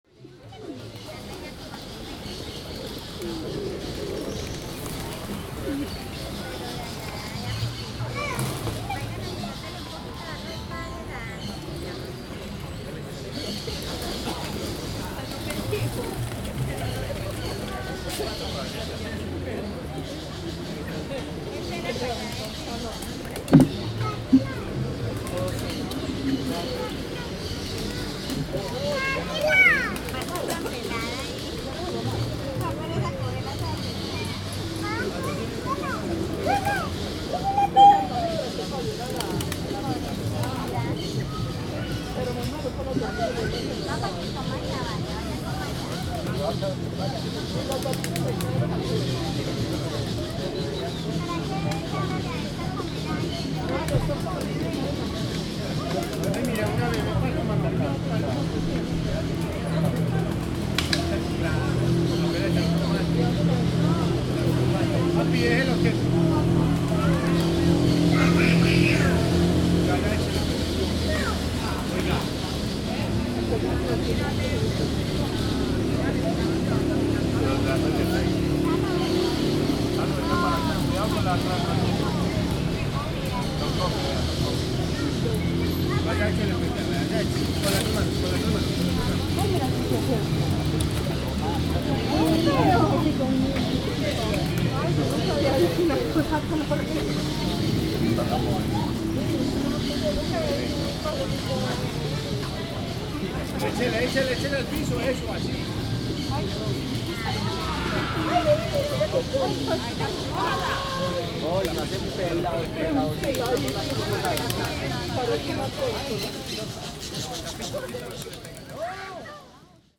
Parque de la Floresta - Parque de la Floresta (Estéreo)
People talking, nature in city and birds.
Antioquia, Colombia, 30 July 2018